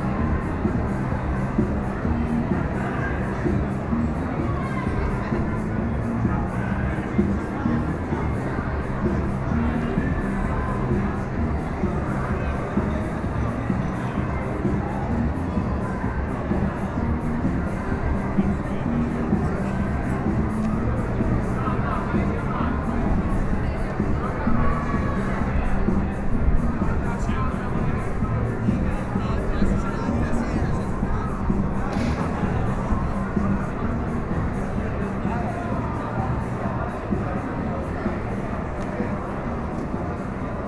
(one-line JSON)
{"title": "kasinsky: a day in my life", "date": "2010-05-27 00:55:00", "description": "...nightlife in the citys historic square...", "latitude": "42.85", "longitude": "13.58", "altitude": "158", "timezone": "Europe/Rome"}